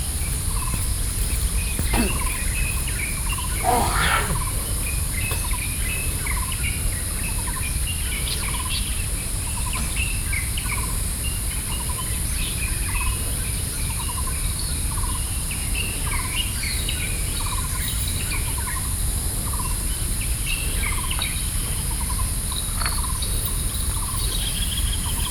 The morning of the hill park, Birdsong, Sony PCM D50 + Soundman OKM II